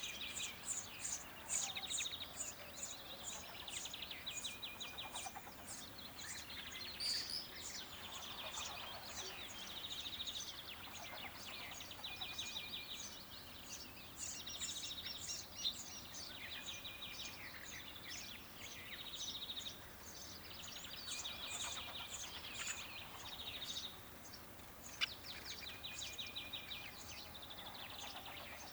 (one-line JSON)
{"title": "larnichtsberg, swallows, crows and insects", "date": "2011-08-12 13:35:00", "description": "On a mellow windy summer morning near a forest. Swallows crossing a wheat field, some crows on a tree and insect in the meadow.\nLarnichtsberg, Schwalben, Krähen und Insekten\nAn einem milden windigen Sommermorgen in der Nähe eines Waldes. Schwalben überqueren ein Weizenfeld, einige Krähen auf einem Baum und Insekten in der Wiese.\nLarnichtsberg, hirondelles, corbeaux et insectes\nUn doux et venteux matin d’été aux abords d’une forêt. Des hirondelles passent au dessus d’un champ de blé, des corbeaux sont assis sur un arbre et des insectes volent dans la prairie.", "latitude": "50.02", "longitude": "6.07", "altitude": "461", "timezone": "Europe/Luxembourg"}